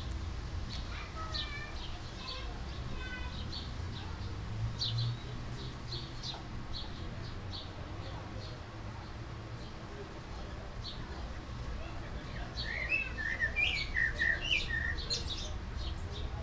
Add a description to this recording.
Birds, wind and neighbours chatting in the backyard of my parents house. Zoom H2 recorder with SP-TFB-2 binaural microphones.